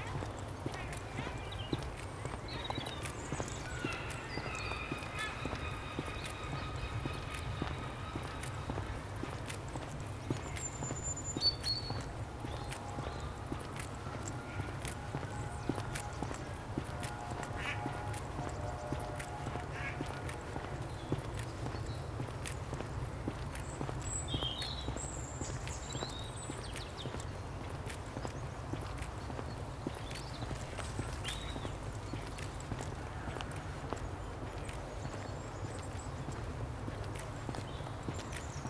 Bute Park, Cardiff - Walking Through Bute Park, Cardiff
Walking through Bute Park, Cardiff, 4.45pm, Saturday 26th March 2011. On my way back to the hotel I was staying at from RWCMD.
The Police helicopter is patrolling the area as the Wales versus England football European Championship qualifying match draws to a close at the nearby Millennium Stadium. (England won 2-0)...I have a squeaky bag over my shoulder!